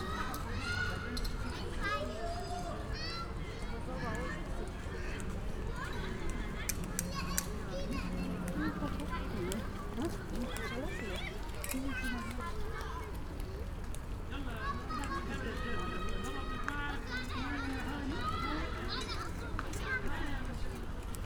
Ernst-Busch-Straße, Berlin, Deutschland - housing project, yard ambience
Evening ambience, yard between houses. Since September 2017, around 450 refugees have been living in the residential building, including families with children and single travellers. The local operation is organised by the Stephanus Foundation, which supports the people with a facility management and local social workers.
(SD702, DPA4060)
1 September 2019, ~20:00